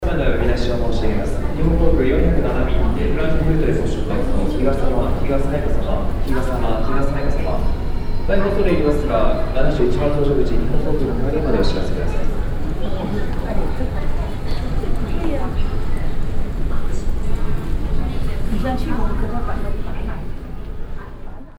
tokyo, airport, announcement
A short japanese announcement in the departure area
international city scapes - topographic field recordings and social ambiences
28 June 2011, 18:27